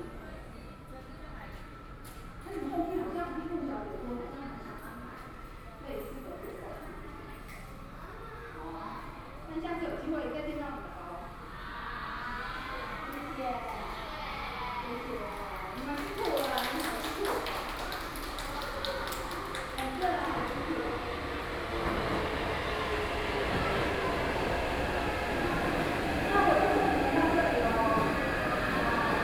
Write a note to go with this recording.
Navigation, Site staff are introduced to a group of children MRT, Sony PCM D50 + Soundman OKM II